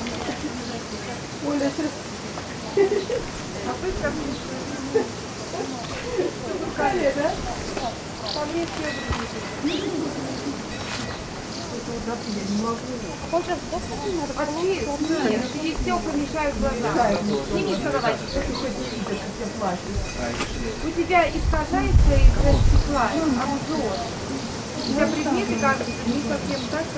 Chufut-Kale, cavetown, Bahkchsysaray, Crimea, Ukraine - In-& outside a 3-floor-cavelandscape
Chufut-Kale is one of 3 cavetowns in the area of 10kms around Bahkchsysaray, one of the last remaining settlements of Crimean Tatars, the local muslim minority.
The history of the cavetowns goes back into the 6th century, Byzanthine time, but no definite history is agreed on, even wikipedia the information on site diverge. It seems certain, that fron the 10th century on, the place was mainly populated by Alans, the most powerful Sarmantian tribes of Iranian decent, that adopted Christianity. The Tatar horde of Emir Nogai took over Bakhchsysaray in 1299, and at the turn of the 15th century Tatars settled Karaite (a Jewish Sekte) craftsmen in front of the eastern line of fortifications. The significance of Kyrk-Or, the original name, as a stronghold declined, and the Crimean Khan, Menglis-Girei, moved his capital to Bakhchsysaray. The old town remained a citadel of Bakhchsysaray and a place of incarceration for aristocratic prisoners. In the mid-17th century Tatars left Kyrk-Or.
16 July 2015